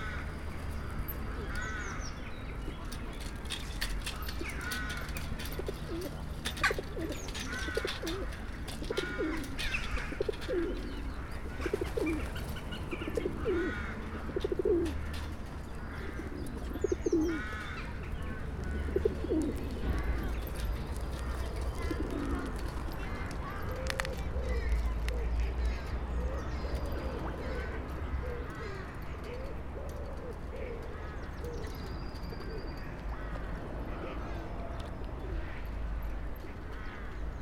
Pigeons, splashing fish and some other park sounds.